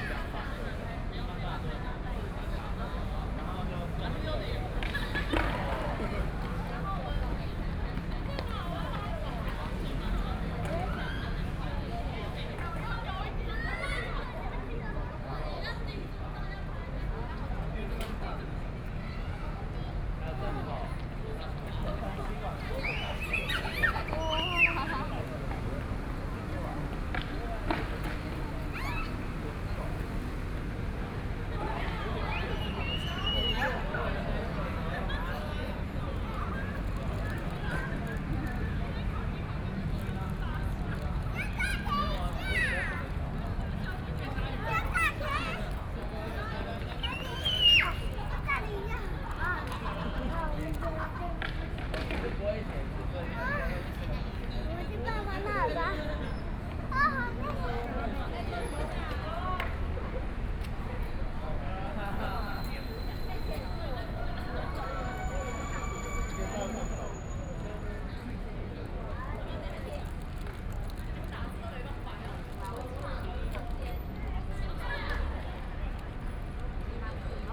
Taipei Cinema Park - Plaza
Students and people on the square, Chatting and rest of the public, Group of young people are practicing skateboard and dance, Binaural recordings, Sony PCM D50 + Soundman OKM II
Taipei City, Taiwan, 19 October